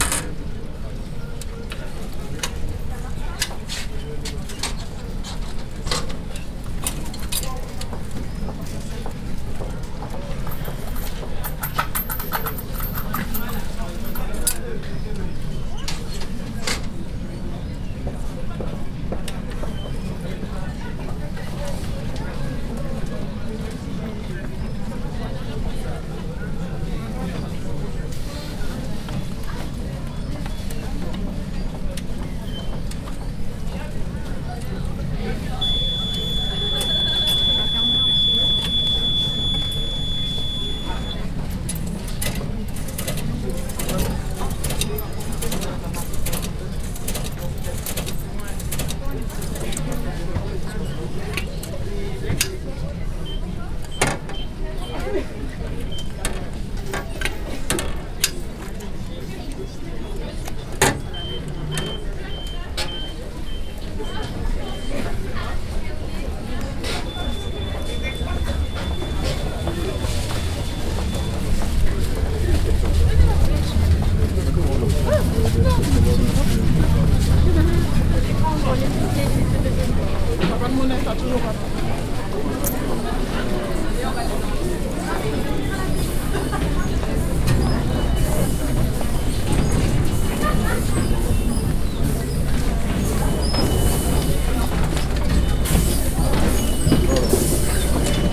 Paris, Chatelet - Les Halles RER station, Ticket vending machine
Paris, Chatelet - Les Halles, RER station, Ticket vending machine, crowd